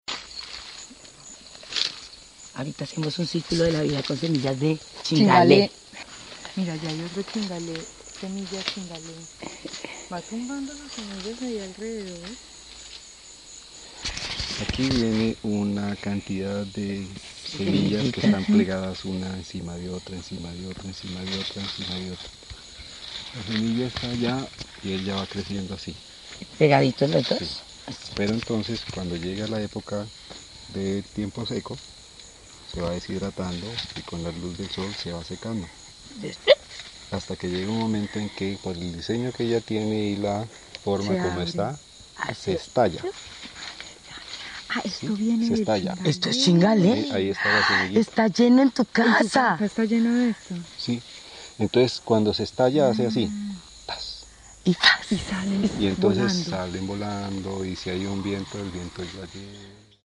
Unnamed Road, Vda. El Trebol, Puerto Guzmán, Putumayo, Colombia - Chingalé en La Sinita
LA SINITA, donde la SIlvicultura es boNITA, silvicultura regenerativa, paisaje sonoro y poética del ciclo de vida del Chingalé, desde su semilla.
April 2018